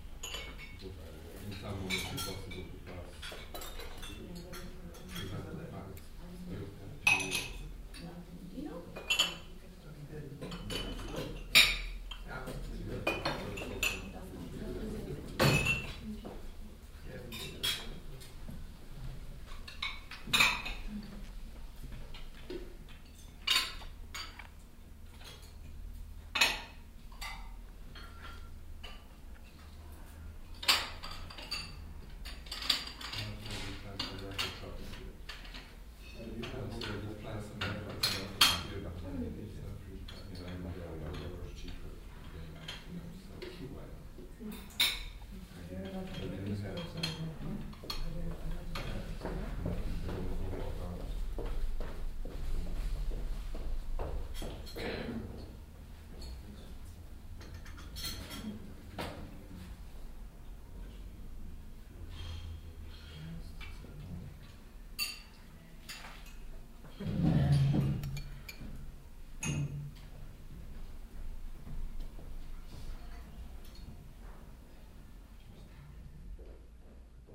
osnabrück, hotel westermann, fruestuecksraum
project: social ambiences/ listen to the people - in & outdoor nearfield recordings